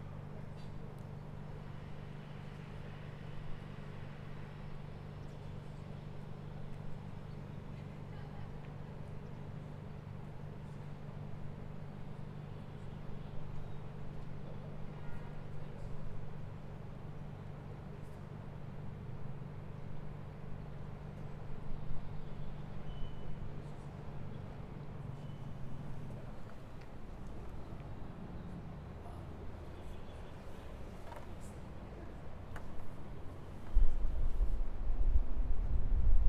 Waiting for the train from Bologna. The anouncements in Italian and German are repetetive. A local train is coming. A train drives through the station. Birds are singing. Young students are chatting. A siren, the usual saturday noon test. Some wind. There is a cut where one train became to loud, as I hope quite audible.
28 April, ~12:00